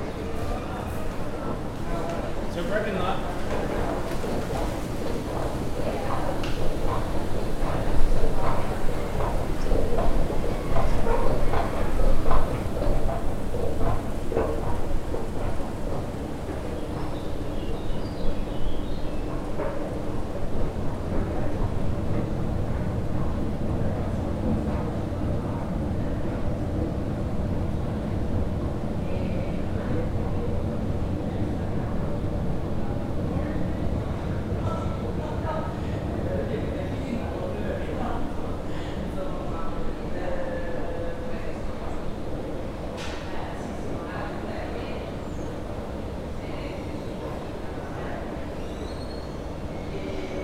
Giardini della Canapina, Via Canapina, Perugia, Italia - la cupa escalators
sounds of the escalators
22 May, ~16:00